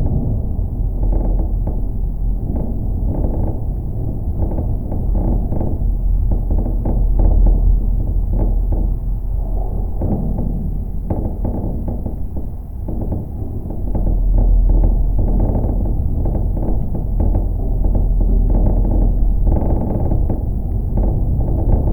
Klykunai, Lithuania, metallic watertower
Working metallic watertower. Recorded with a pair of contact mics and geophone.
Anykščių rajono savivaldybė, Utenos apskritis, Lietuva